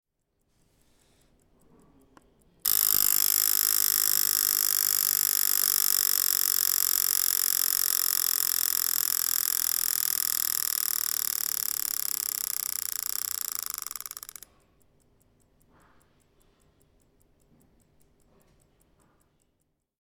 bonifazius, bürknerstr. - klappbarer reisewecker, klein
18.11.2008 19:50 alter reisewecker klein, zweifach faltbar / old travel clock, foldable
Berlin, Deutschland, 18 November, 18:25